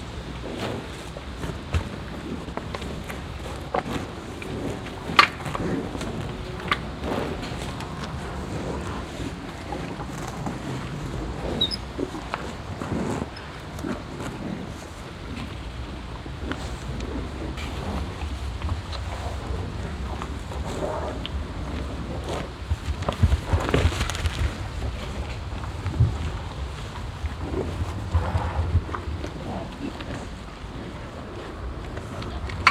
Burg-Reuland, Belgien - Herd of cows on a meadow
Eine größere Herde von Kühen auf einer Wiese. Der Klang ihrer Münder und des Atmens nahe den Mikrofonen. Nach der Hälfte der Aufnahme ist ein Fahrradfahrer zu hören, der auf der asphaltierten Wegstecke vorbeifährt.
Im Hintergrund Verkehrsgeräusche der Bundesstraße.
A larger herd of cows on a meadow. The sound of there mouthes and breathing nearby the microphons. After the middle of the recording the sound of a cyclist passing by on the asphalted bicycle trail.
Luxembourg